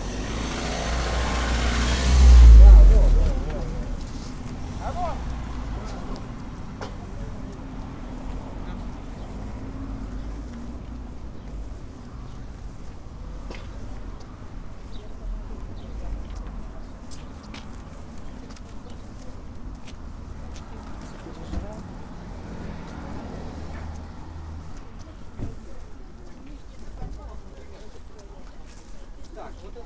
ул. Пионеров, Барнаул, Алтайский край, Россия - Barnaul 02
Walkinth through rows of merchants near "Jubileum" market in Barnaul. Voices in Russian, commercials, cars, ambient noise.